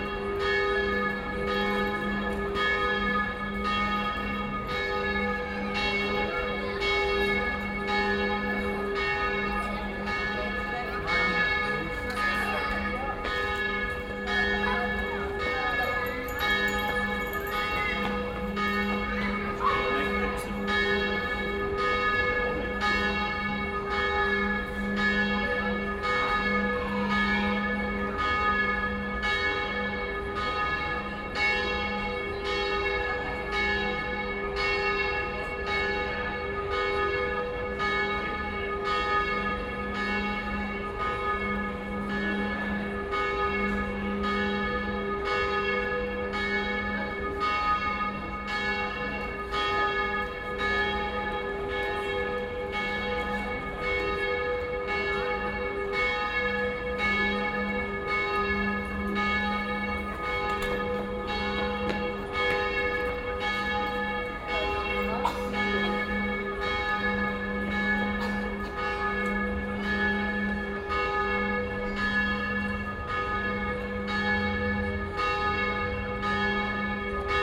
Aarau, Kirchplatz, Preperation for Party, Schweiz - Kirchplatz Festvorbereitung
Kirchplatz, the day before the Maienmzug in Aarau. Different bells, quite long, preperations, laughter.
Aarau, Switzerland, 30 June 2016